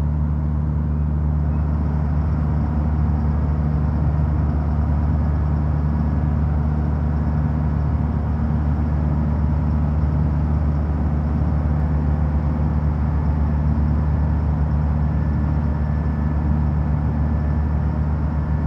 Jablanac-Rab, Ferry
Waiting ferry to depart
Ličko-Senjska županija, Hrvatska